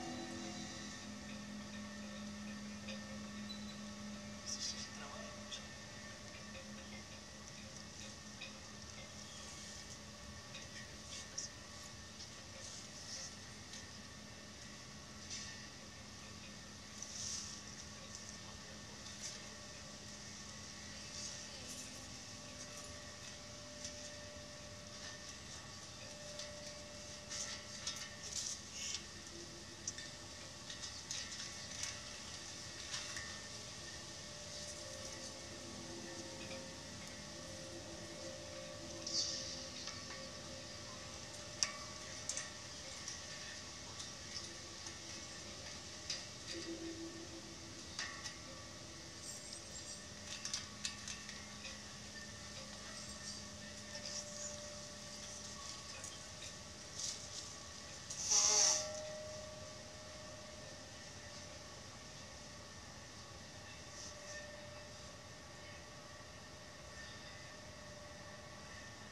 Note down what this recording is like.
Contact mic placed on a metal tram pole in Stromovka Park. This was made during an excursion for the New Maps of Time sound workshop in October 2009. You can hear the voices of some of the participants talking in the background